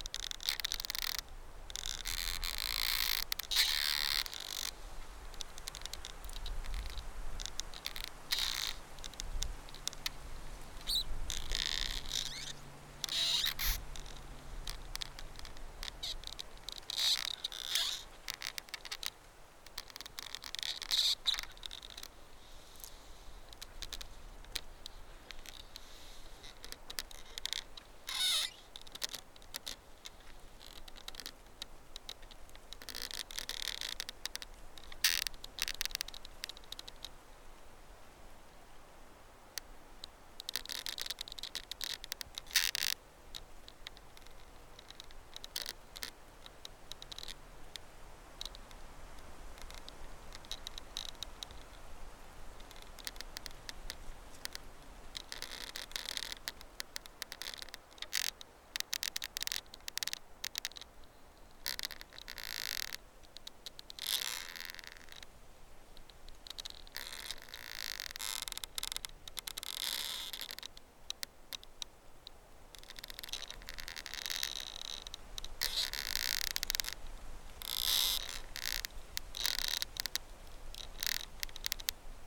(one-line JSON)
{"title": "Vilnius, Lithuania, communal gardens - Creaking Tree", "date": "2021-10-06 15:58:00", "description": "A beautiful sunny autumn day, close to Vilnius. Little forest close to the field. I went mushroom picking, but found only this creaking tree.\nI have used a Zoom H5 recorder with stereo microphone and one piezo microphone.", "latitude": "54.83", "longitude": "25.32", "altitude": "151", "timezone": "Europe/Vilnius"}